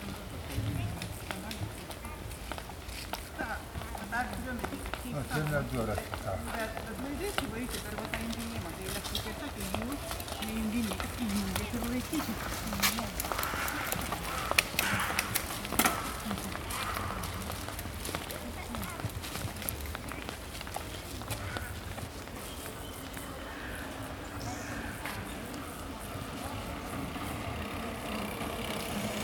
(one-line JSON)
{"title": "Neringos sav., Lithuania - Wooden Swing", "date": "2016-07-27 17:43:00", "description": "Recordist: Saso Puckovski. The screeching of a wooden swing in use. Bird sounds and tourists can be heard. Recorded with ZOOM H2N Handy Recorder.", "latitude": "55.30", "longitude": "21.00", "altitude": "4", "timezone": "Europe/Vilnius"}